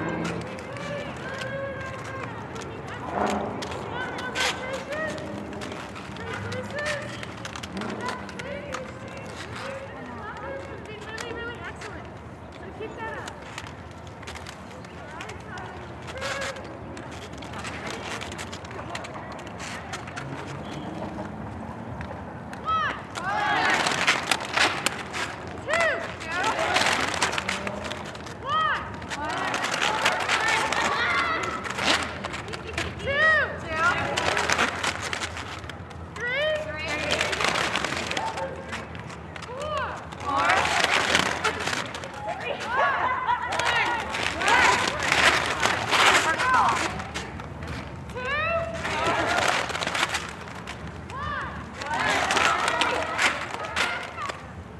Shaler Ave, Glendale, NY, USA - Roller Derby Training Exercises
A roller derby team performs a set of exercises that consist of sudden skating stops.
United States, 27 March 2022, ~13:00